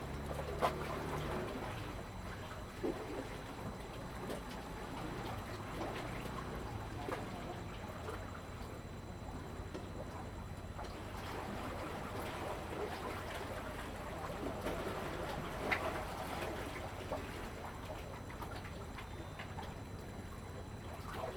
永安漁港, Taoyuan City - Slip block and Waves
In the fishing port, Slip block, Waves, Zoom H2n MS+XY